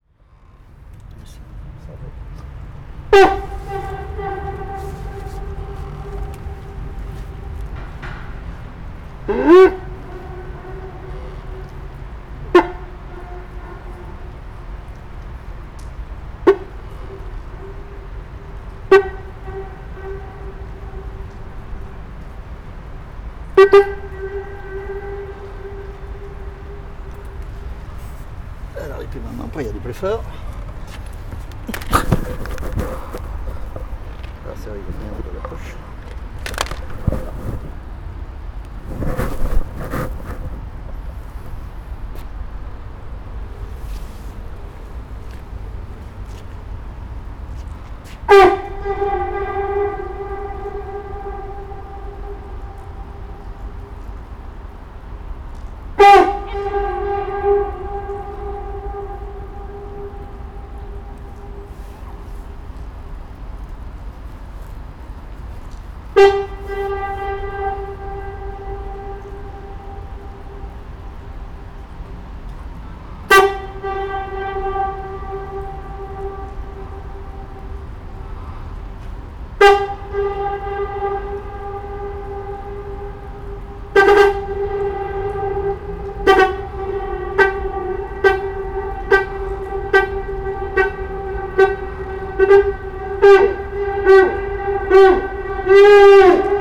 Pont Schuman, Lyon, France - Échos du pont Schuman
Des échos enregistrés sous le pont Schuman, quai de Saône, Lyon 4e